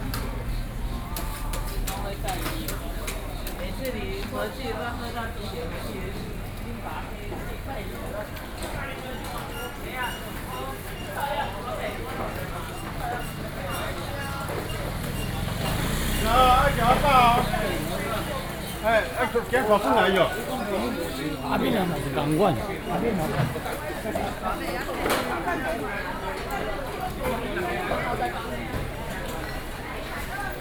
中正路, Xizhi Dist., New Taipei City - Traditional markets
New Taipei City, Taiwan